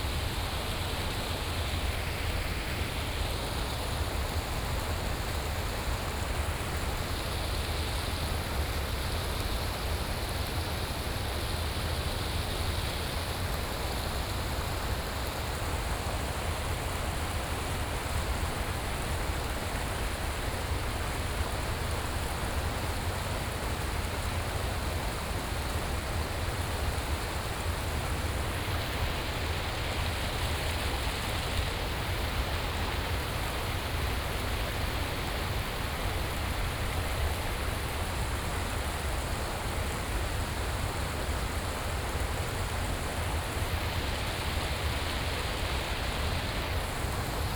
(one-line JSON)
{"title": "TaoMi River, 桃米里 Puli Township - the stream", "date": "2015-06-10 17:24:00", "description": "Next to the stream", "latitude": "23.94", "longitude": "120.92", "altitude": "488", "timezone": "Asia/Taipei"}